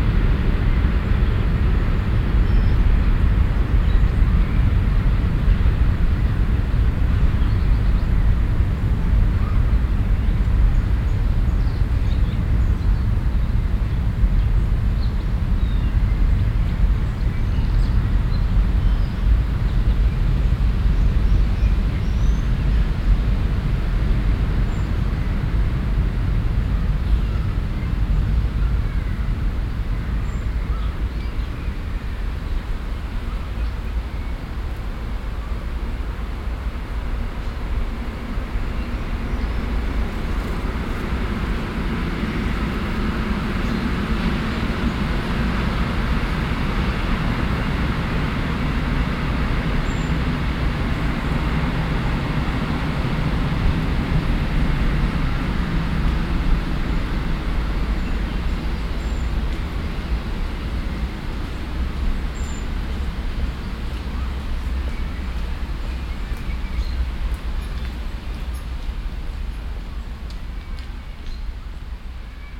cologne, stadtgarten, unter Hasel Baum, nachmittags - cologne, stadtgarten, unter hasel baum, nachmittags
unter haselbaum nahe weg stehend - stereofeldaufnahmen im juni 08 - nachmittags
project: klang raum garten/ sound in public spaces - in & outdoor nearfield recordings